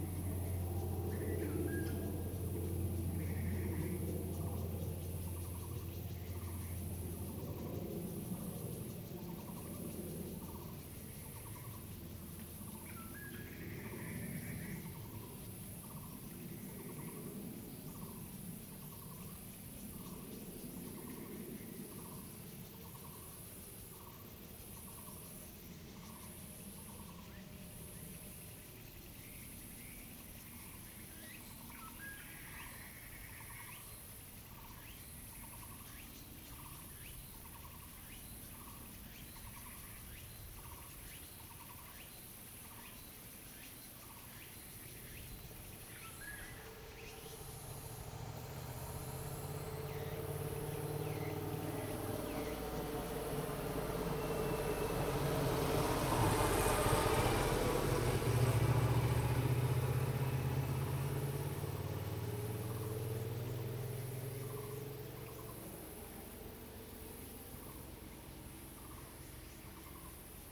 東眼產業道路, Sanxia Dist., New Taipei City - Several kinds of birds sounded
Several kinds of birds sounded, Zoom H2n MS+XY